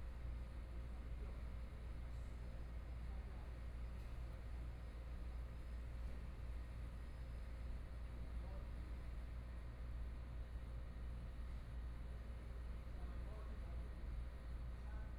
民安里, Taipei City - Small park
Temporary nature of the small park, Traffic Sound, Binaural recordings, Zoom H4n+ Soundman OKM II